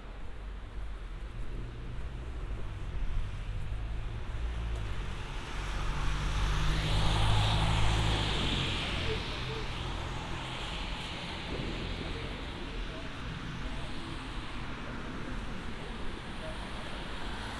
Walking in the streets of Friedenau on New Year Eve, fireworks have already started here and there, few people in the streets, angry policeman (Roland R-07+CS-10EM)